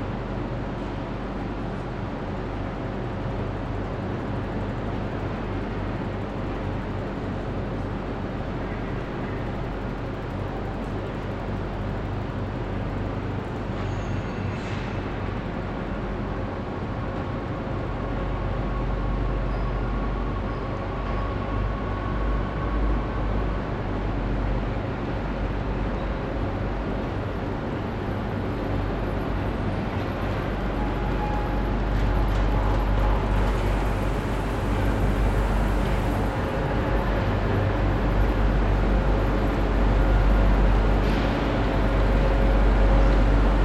Bordeaux Saint-Jean, Bordeaux, France - BDX Gare 03